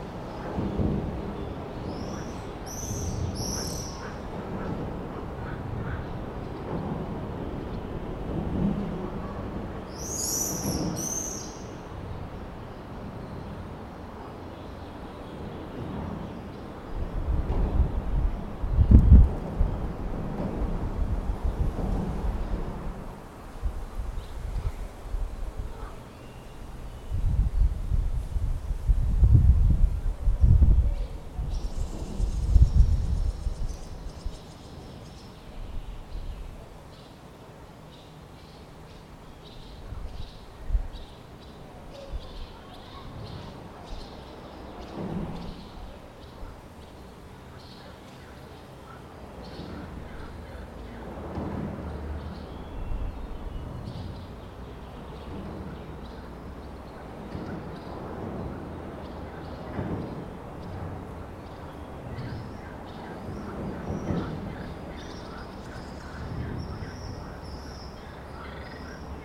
вулиця Рєпіна, Вінниця, Вінницька область, Україна - Alley12,7sound6soundunderthebridge
Ukraine / Vinnytsia / project Alley 12,7 / sound #6 / sound under the bridge